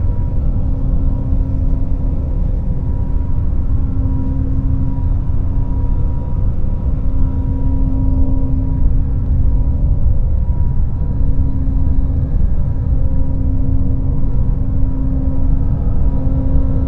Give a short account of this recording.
A walk threw the Poses dam, with powerful Seine river flowing.